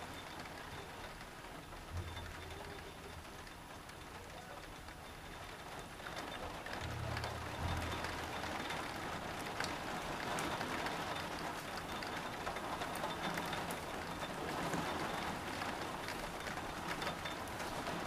Anholt Skole, Danmark - Rain shower
The recording was made inside, under a window, and documents the varied intensity of a heavy rain shower. It was made using a Zoom Q2HD on a tripod.